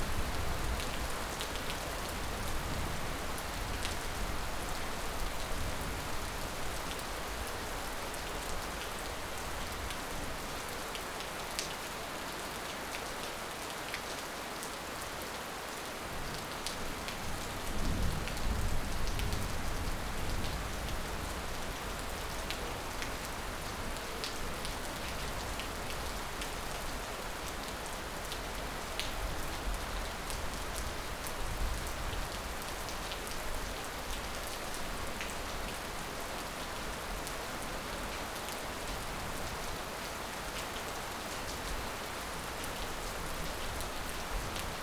Rain at Gairal FRH, Corbett Tiger Reserve
From the March-April 2009 Corbett Tiger Reserve field trip. Light rain and thunder on the banks of the Ramganga river.
Uttarakhand, India, 3 April 2009, 07:05